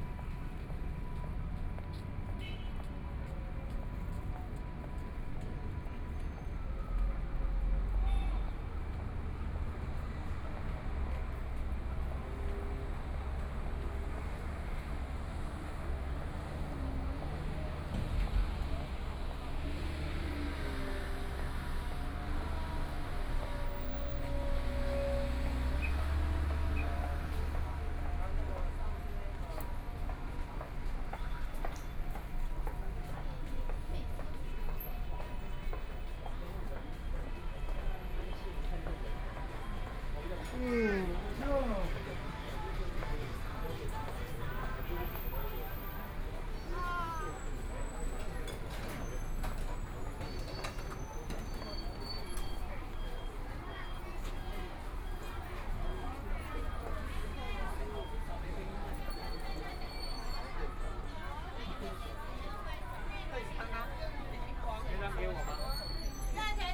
Walking on the road, Walking through the streets, To MRT station, Various shops voices, Motorcycle sound, Traffic Sound, Binaural recordings, Zoom H4n+ Soundman OKM II
Taipei City, Taiwan, 15 February 2014, 18:43